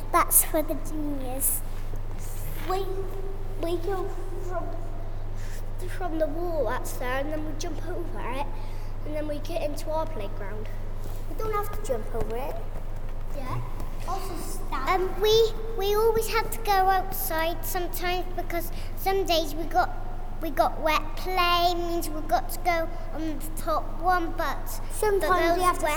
Sports hall with 1/2W